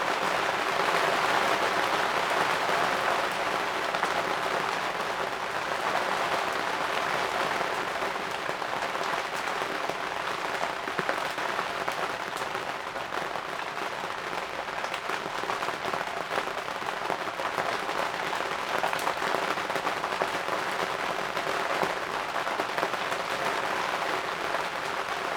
workum, het zool: marina, berth h - the city, the country & me: rainy morning
rainy morning aboard
the city, the country & me: june 23, 2015
Workum, The Netherlands, 23 June 2015, ~10:00